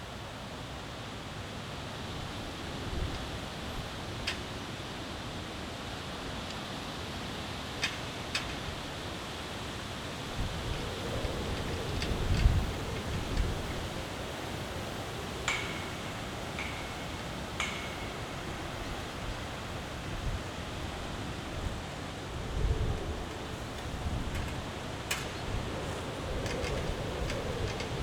Tempelhofer Feld, Berlin - construction site, fence, wind
it seems some excavation or construction work is going on at this place, the area is surrounded by a metal fence, which is moved by the wind, on a bright autumn sunday morning.
(SD702, AT BP4025)
Berlin, Germany